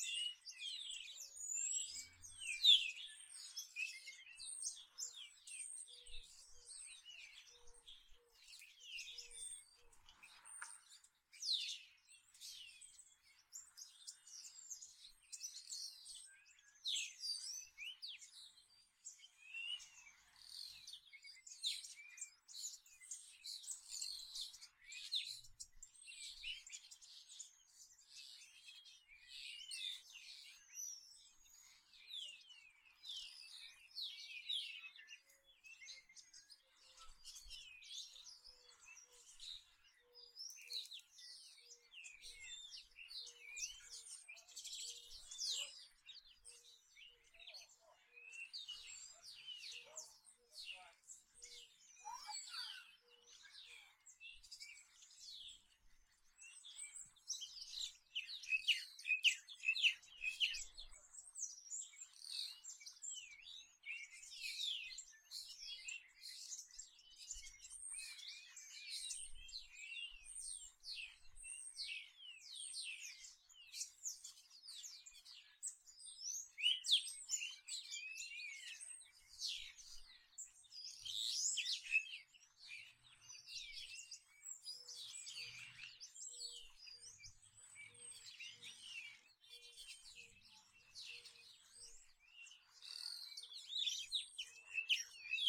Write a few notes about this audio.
Birds on trees, Belém, weekend confinement. Recorded on a zoom H5 with a HSX6 XY stereo capsule and isotope RX treatment.